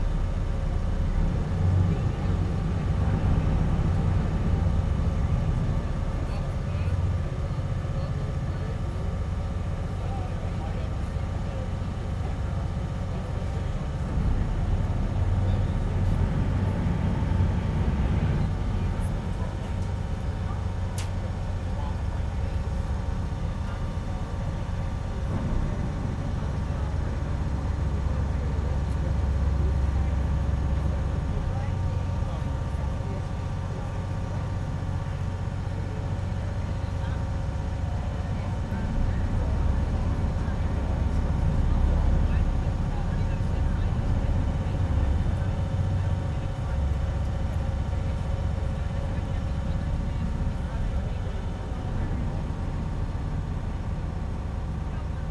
Toronto Division, ON, Canada - Ferry from Toronto Islands
Ferry (Ongiara) from Hanlan's Point terminal to mainland terminal.